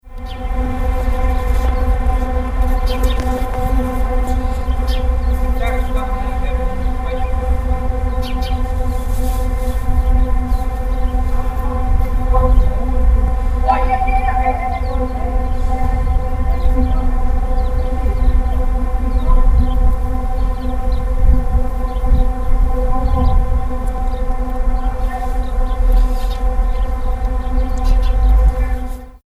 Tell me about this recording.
(Winter, Birds, Pipes, binaurals)